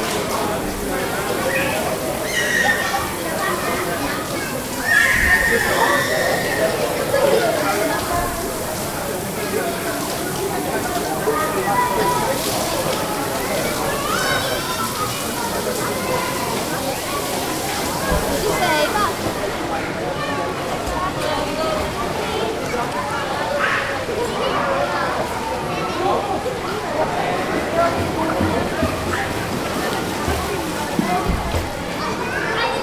Prague, Czech Republic - YMCA swimming pool
around the inner swimming pool at YMCA - Young Men's Christian Association at Na Poříčí street.